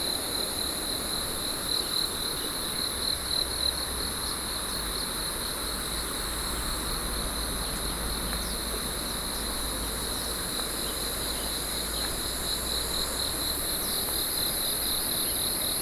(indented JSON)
{
  "title": "Shilin District, Taipei - early in the morning",
  "date": "2012-06-23 05:31:00",
  "description": "Early in the morning, River bank, Sony PCM D50 + Soundman OKM II",
  "latitude": "25.11",
  "longitude": "121.57",
  "altitude": "140",
  "timezone": "Asia/Taipei"
}